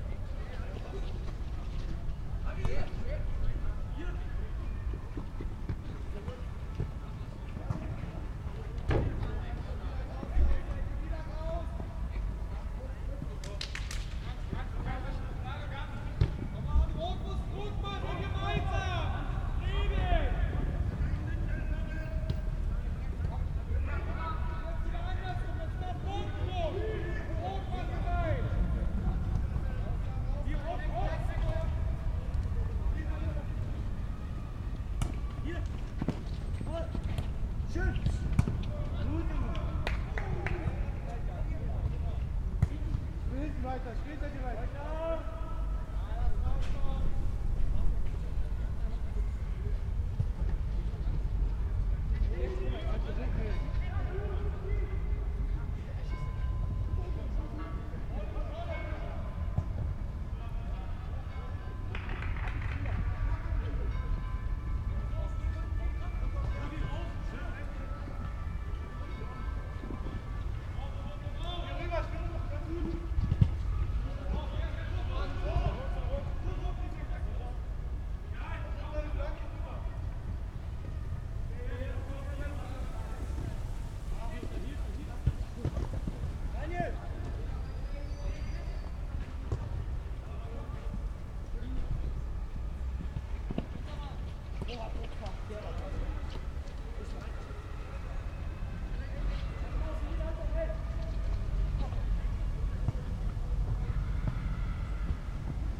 Mitte, Berlin, Germany - Berlin Mitte Fussballtraining
Fußball-training in Berlin Mitte.